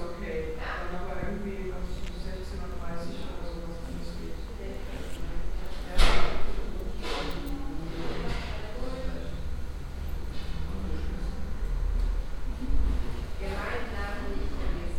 cologne, marienburg, dentist waiting room
Inside a dentist's waiting room. The sound of people talking in a reverbing room atmosphere, beeping signal from a machine, telephone and doorbells, the receptionists talking to patients, a patient leaving.
soundmap nrw - social ambiences and topographic field recordings
Cologne, Germany